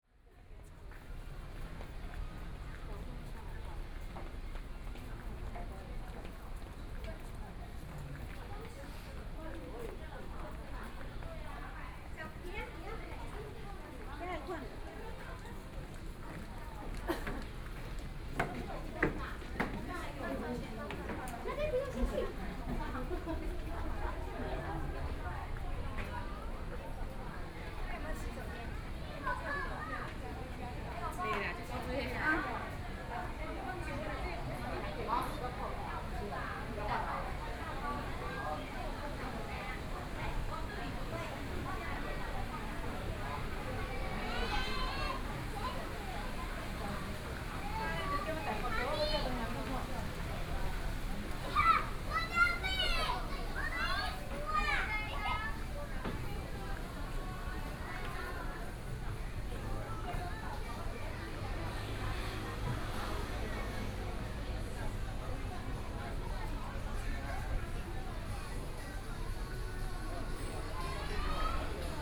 湯圍溝溫泉公園, Jiaosi Township - Hot Springs Park
walking in the Hot Springs Park
Zoom H6 XY mic+ Rode NT4
Yilan County, Taiwan, 17 July 2014